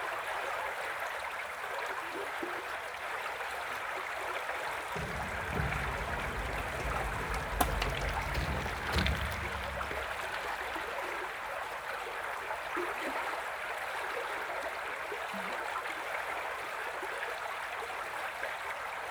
Weir and running water, Lübbenau, Germany - Weir and running water, cyclists over metal bridge
Running water bubbling over the small weir. 3 cyclists cross the metal bridge.
Brandenburg, Deutschland